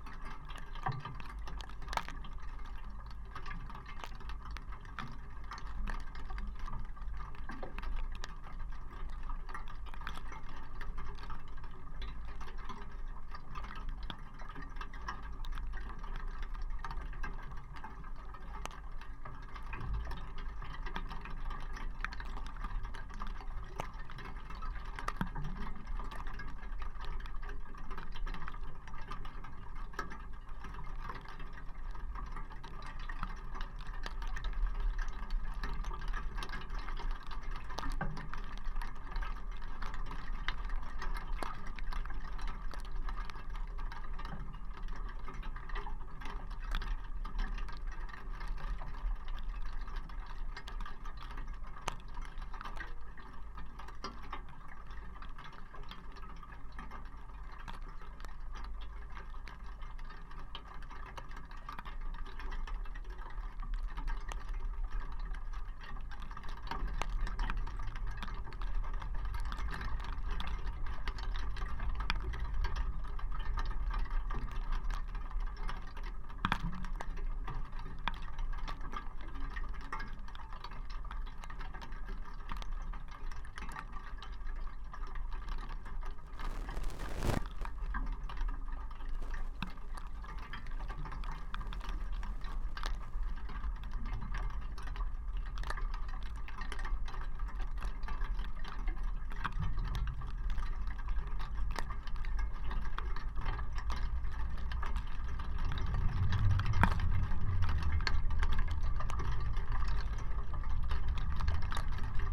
abandoned soviet army era millitary territory. remaining pieces of barbed wire. contact microphones
Utena, Lithuania